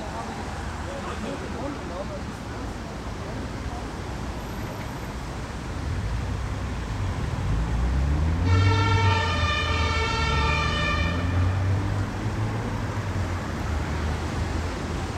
a 24 hour soundscape of this intersection, where gentrification is visible as well as audiable